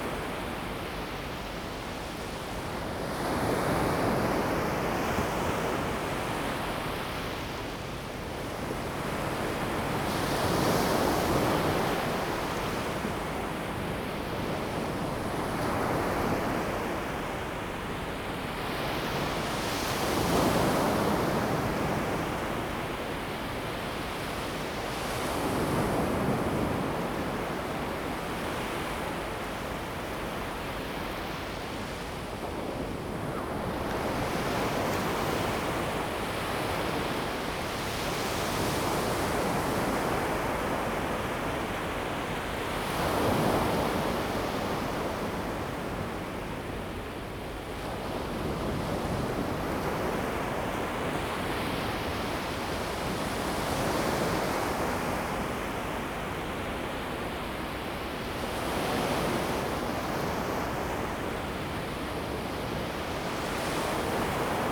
西子灣海水浴場, 鼓山區Kaohsiung City - At the beach
Sound of the waves, Beach
Zoom H2n MS+XY